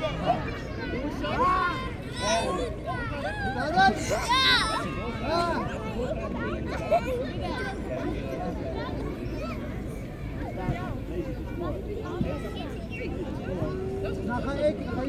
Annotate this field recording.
During a very sunny week-end, a lot of children playing in the kindergarden.